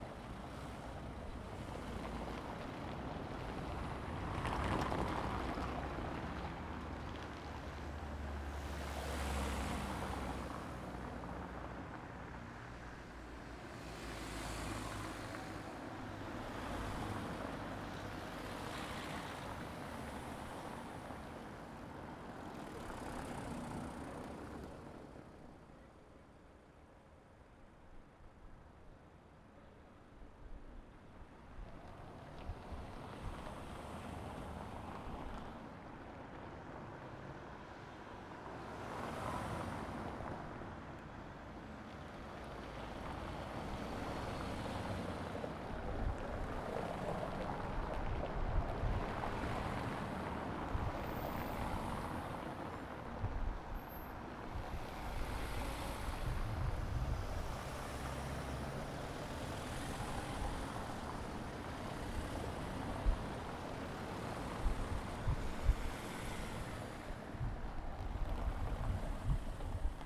Park Spoor Noord, Antwerpen, België - Kinderkopkes
Recorded close to a street with cobbles. In our Dutch dialect we also use the word "Kinderkopkes" for this typical cobbles. It's roughly translated in little children's heads. I used the X-Y microphone of the H4 recorder. No wind protection, but luckily there wasn't to much wind.
29 March 2013, 16:30